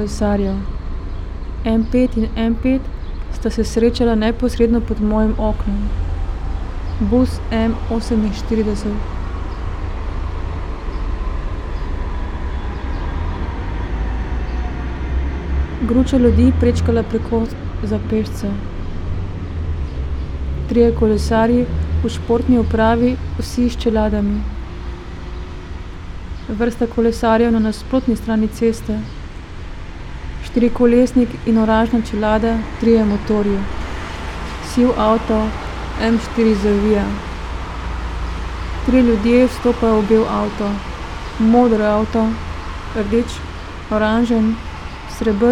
writing reading window, Karl Liebknecht Straße, Berlin, Germany - may 19 2013, 10:41